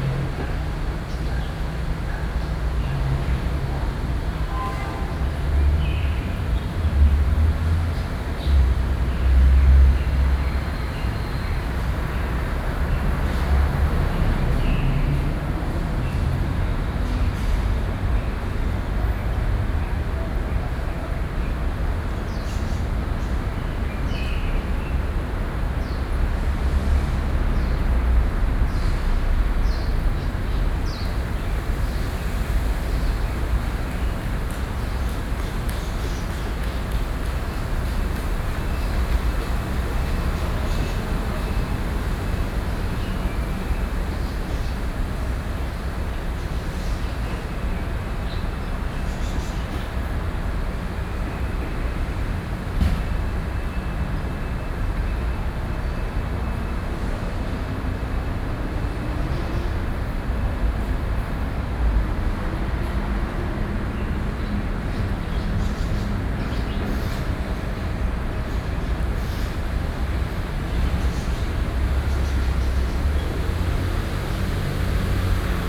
中央公園, Xindian District - in the Park
In the park, Bird calls, Traffic SoundEngineering Noise
Zoom H4n+ Rode NT4
New Taipei City, Taiwan, 28 June 2012